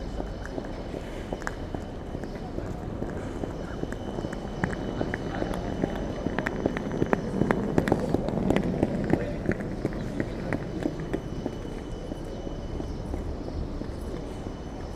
{"title": "Schmidtstedter Str., Erfurt, Deutschland - Erfurt Main Station Forecourt 3", "date": "2020-07-16 08:38:00", "description": "*Recording in AB Stereophony.\nMorning activity building up: Scattered conversations, trolley wheels on paved floor, vivid footsteps, people, bus and tram engines and wheels, and subtle birds.\nThe space is wide and feels wide. It is the main arrival and transit point in Thuringia`s capital city of Erfurt. Outdoor cafes can be found here.\nRecording and monitoring gear: Zoom F4 Field Recorder, RODE M5 MP, Beyerdynamic DT 770 PRO/ DT 1990 PRO.", "latitude": "50.97", "longitude": "11.04", "altitude": "199", "timezone": "Europe/Berlin"}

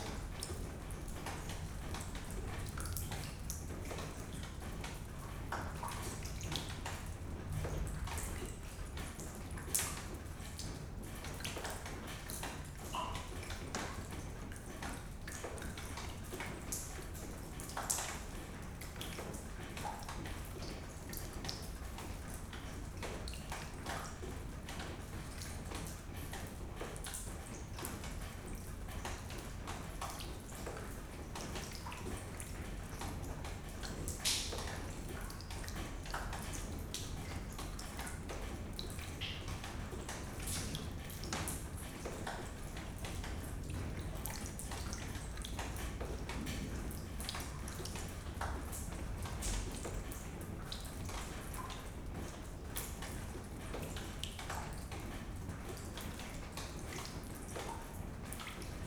{"title": "Utena, Lithuania, in the abandoned cinema", "date": "2012-07-05 11:40:00", "description": "abandoned building of cinema in the centre of Utena. raining. thunderstorm. a leaking ceiling.", "latitude": "55.51", "longitude": "25.60", "altitude": "111", "timezone": "Europe/Vilnius"}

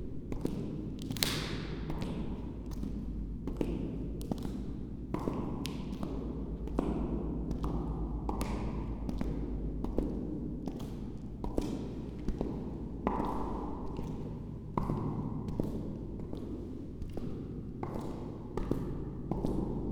{"title": "chamber cistern, wine cellar, Maribor - walking echo, slow", "date": "2014-10-21 13:20:00", "latitude": "46.56", "longitude": "15.65", "altitude": "274", "timezone": "Europe/Ljubljana"}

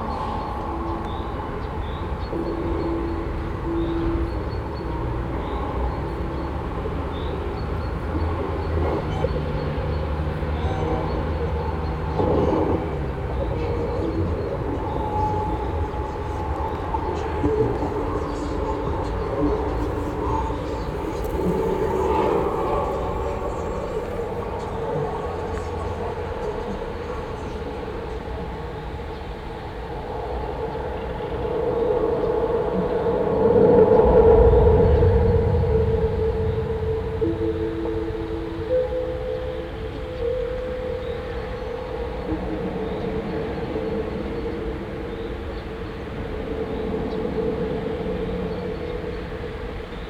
{"title": "Cetatuia Park, Klausenburg, Rumänien - Cluj, Fortress Hill project, water fountain sculptures", "date": "2014-05-27 10:20:00", "description": "At the temporary sound park exhibition with installation works of students as part of the Fortress Hill project. Here the close up recorded sound of the water fountain sculpture realized by Raul Tripon and Cipi Muntean in the third tube of the sculpture. In the background strett traffic.\nSoundmap Fortress Hill//: Cetatuia - topographic field recordings, sound art installations and social ambiences", "latitude": "46.77", "longitude": "23.58", "altitude": "374", "timezone": "Europe/Bucharest"}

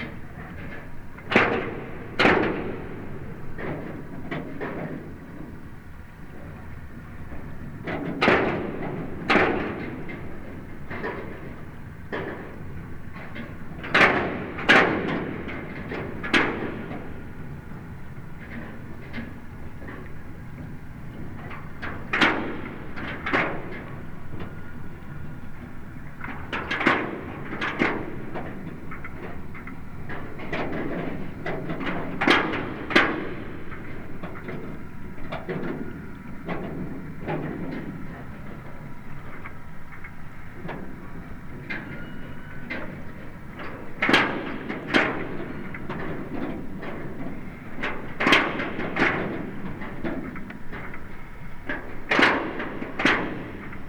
{"title": "Gdańsk, Poland - Hydrfon 1", "date": "2016-08-21 11:15:00", "description": "Hydrofon_nagranie z łódki.", "latitude": "54.34", "longitude": "18.82", "timezone": "Europe/Warsaw"}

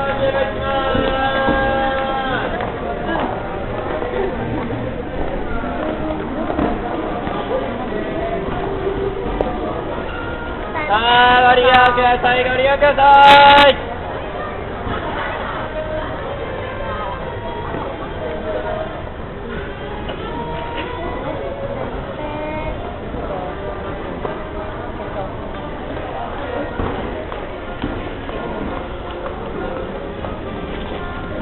{"title": "productannouncement at cosmetic shop 20.dez 6.20pm", "latitude": "35.71", "longitude": "139.67", "altitude": "48", "timezone": "GMT+1"}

